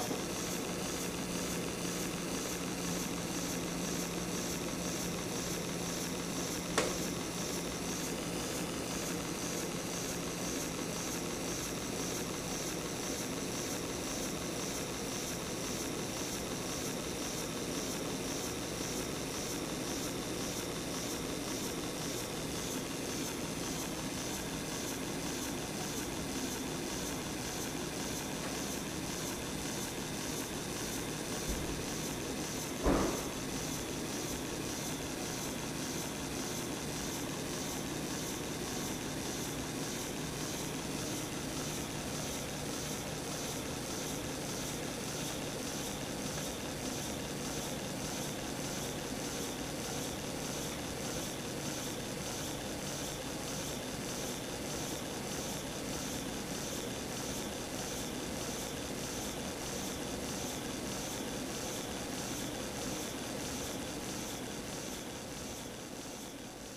A zoetrope is cranked by hand and allowed to spin. Located within the small exhibit area at the rear of Hsinchu City's Image Museum. Stereo mics (Audiotalaia-Primo ECM 172), recorded via Olympus LS-10.